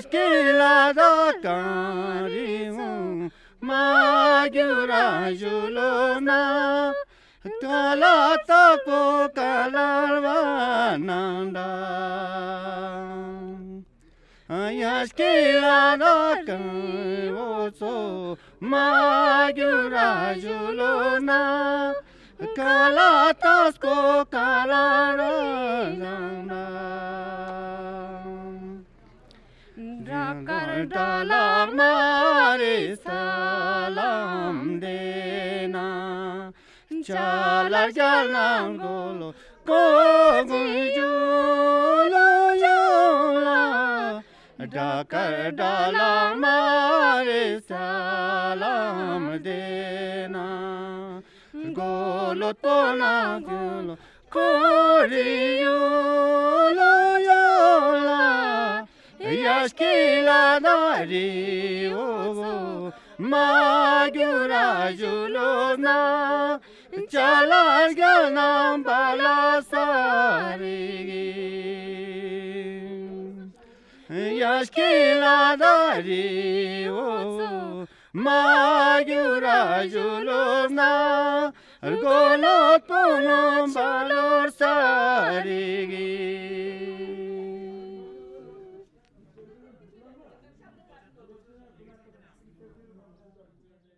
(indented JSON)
{
  "title": "5H7J+6C Leh - Leh - Ladak - Inde",
  "date": "2008-05-12 18:00:00",
  "description": "Leh - Ladak - Inde\ndans la cour d'une Guest House, un duo de musique folklorique.\nFostex FR2 + AudioTechnica AT825",
  "latitude": "34.16",
  "longitude": "77.58",
  "altitude": "3478",
  "timezone": "Asia/Kolkata"
}